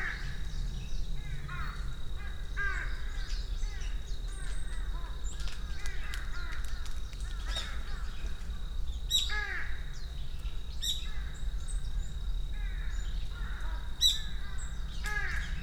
사려니숲 Saryeoni Forest (#2)
사려니숲 Saryeoni Forest is located on the middle slopes of Halla Mountain. Jeju Island is a volcanic artifact, and lava fields are to be easily found. This coverage of special lava geology, as well as the fact that it is an island, gives Jeju a special ecological character. In the mid-ground of this recording are heard the mountain crows...their caws echo among the forest (...there were many trees of a good age and size here as is hard to find in other parts of Korea)...in the foreground the activity of many smaller forest birds...wingbeats...background; the curse of Jeju Island is the inescapable noise of the tourism industry...aircraft, tour buses, etc...
Jeju-si, Jeju-do, South Korea, October 2018